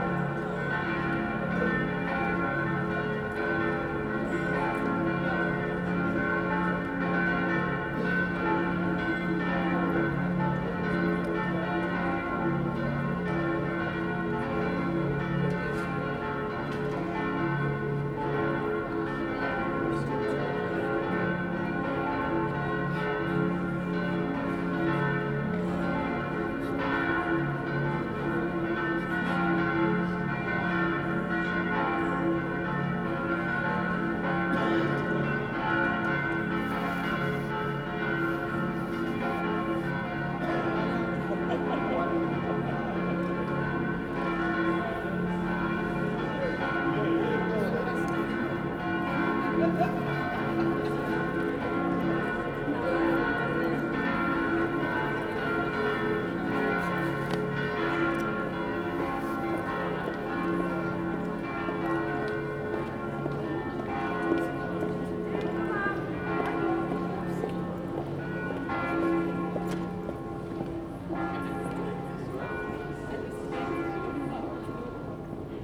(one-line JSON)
{"title": "Ungelt Square - Ungelt at noon", "date": "2015-04-12 12:00:00", "description": "Combination of Bells at Ungelt square, Sunday 12 pm", "latitude": "50.09", "longitude": "14.42", "altitude": "203", "timezone": "Europe/Prague"}